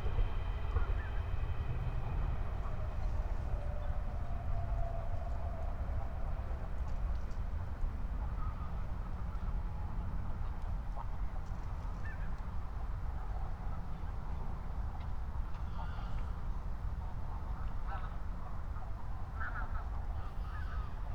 21:03 Berlin, Buch, Moorlinse - pond, wetland ambience
Deutschland